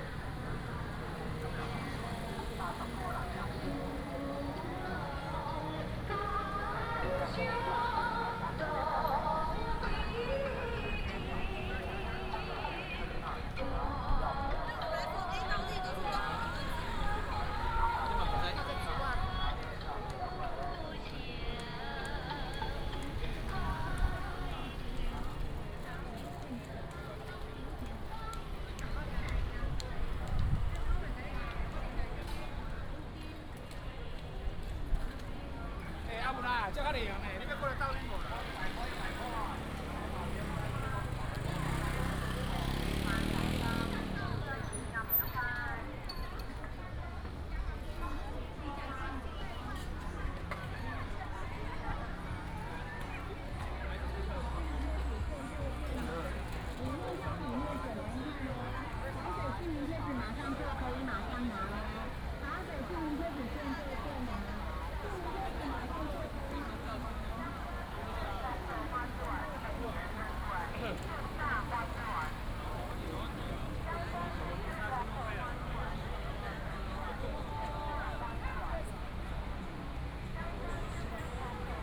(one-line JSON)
{"title": "Jianggong Rd., 大甲區大甲里 - Walking on the road", "date": "2017-03-24 15:10:00", "description": "Temple fair market, Fireworks and firecrackers", "latitude": "24.35", "longitude": "120.62", "altitude": "61", "timezone": "Asia/Taipei"}